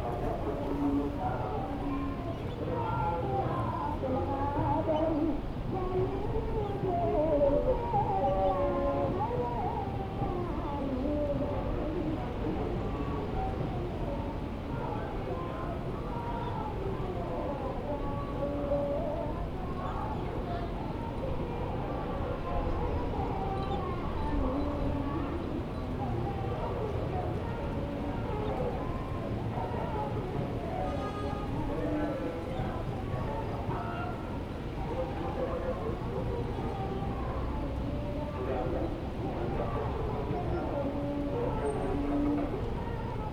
Nord, Saint Louis, Senegal - Fisherman's Village
Standing at the corner of the island facing the archipelago and the Fisherman's Village, this recording was taken at midnight. There was a huge crescent moon overhead. There were dozens of sleeping dogs lying all around me in the sand. There was a breeze coming off the ocean. In the recording you can hear all of the prayers from different mosques on the archipelago. Recorded with a Zoom H4.